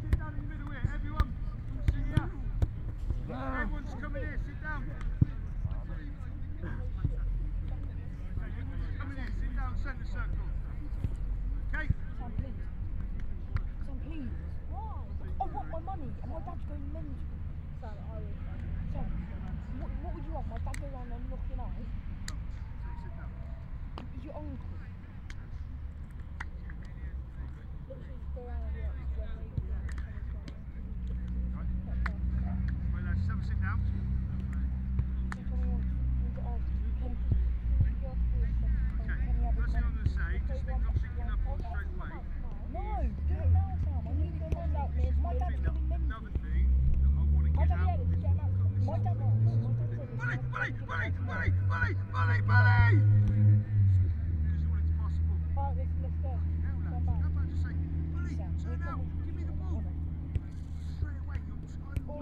Reading Rd, Reading, UK - Woodcote and Stoke Row FC
Pre-season inter-club friendly match between Woodcote and Stoke Row FC main team and their reserve team played on the village green. Recorded using a Jecklin disk with two Sennheiser 8020s on a Sound Devices SD788T.